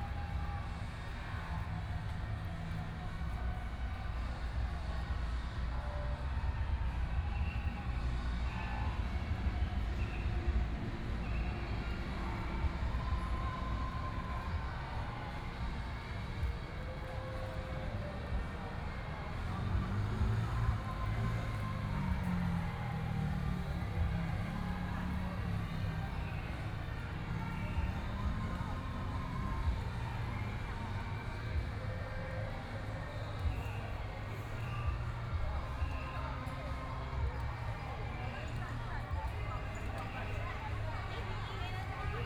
{"title": "內湖區港富里, Taipei City - Sitting in the park", "date": "2014-04-12 21:30:00", "description": "Sitting in the park, Fireworks sound, Footsteps, Traffic Sound\nPlease turn up the volume a little. Binaural recordings, Sony PCM D100+ Soundman OKM II", "latitude": "25.08", "longitude": "121.58", "altitude": "14", "timezone": "Asia/Taipei"}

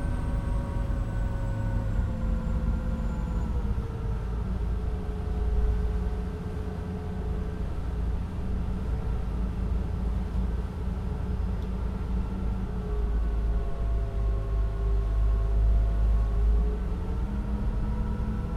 {"title": "Loyalist Pkwy, Glenora, ON, Canada - Glenora - Adolphustown ferry", "date": "2021-11-17 15:00:00", "description": "Recorded from the car window on the ferry from Glenora to Adolphustown in Prince Edward County, Ontario, Canada. Zoom H4n. Much more wind noise than I would have liked, but removing it with a low-cut filter would also have affected other parts where that particular range is desired (engine noise of ferry, etc.)", "latitude": "44.04", "longitude": "-77.06", "altitude": "73", "timezone": "America/Toronto"}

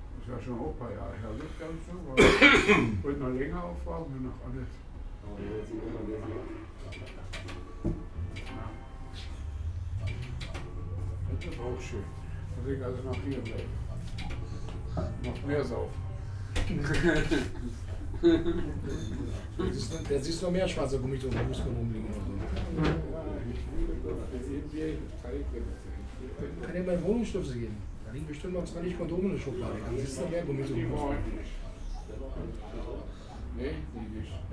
{"title": "Berlin, Plänterwald, S-Bahncafe - conversation", "date": "2011-10-09 14:30:00", "description": "conversation of two men about this and that", "latitude": "52.48", "longitude": "13.47", "altitude": "32", "timezone": "Europe/Berlin"}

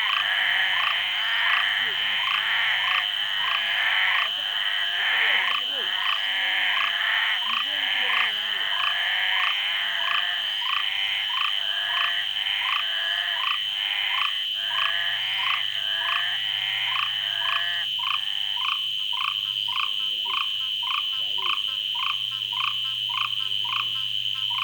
{"title": "Koforidua, Ghana - Amphibia Bonya, Ghana.", "date": "2021-08-22 20:52:00", "description": "Variations of amphibian acoustic phenomena documented in Ghana. Specific species will be identified and documented off and onsite. Acoustic Ecologists are invited to join in this research.\n*This soundscape will keep memory of the place since biodiversity is rapidly diminishing due to human settlements.\nRecording format: Binaural.\nDate: 22.08.2021.\nTime: Between 8 and 9pm.\nRecording gear: Soundman OKM II with XLR Adapter into ZOOM F4.", "latitude": "6.07", "longitude": "-0.24", "altitude": "192", "timezone": "Africa/Accra"}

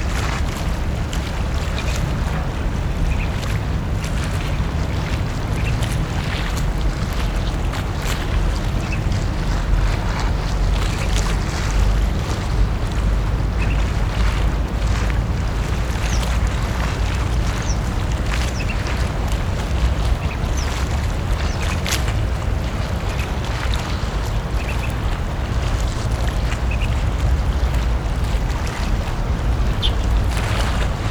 {"title": "wugu, New Taipei City - sound of wave", "date": "2012-01-11 12:59:00", "latitude": "25.10", "longitude": "121.46", "altitude": "4", "timezone": "Asia/Taipei"}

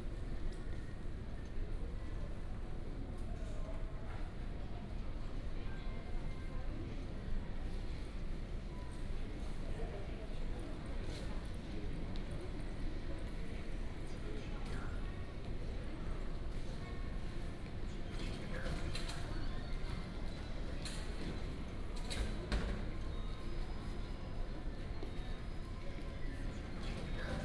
{"title": "高鐵桃園站, Taoyuan City, Taiwan - In the station hall", "date": "2018-02-28 22:36:00", "description": "In the station hall, Night station hall\nBinaural recordings, Sony PCM D100+ Soundman OKM II", "latitude": "25.01", "longitude": "121.21", "altitude": "81", "timezone": "Asia/Taipei"}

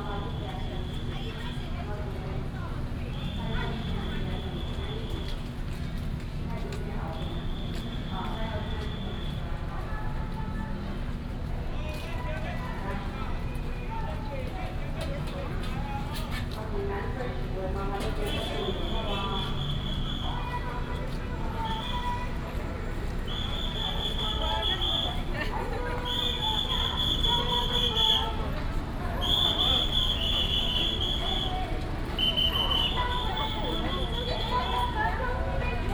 {"title": "Gongzhuan Rd., Huwei Township - temple fair", "date": "2017-03-03 15:06:00", "description": "temple fair, Baishatun Matsu Pilgrimage Procession", "latitude": "23.70", "longitude": "120.43", "altitude": "32", "timezone": "Asia/Taipei"}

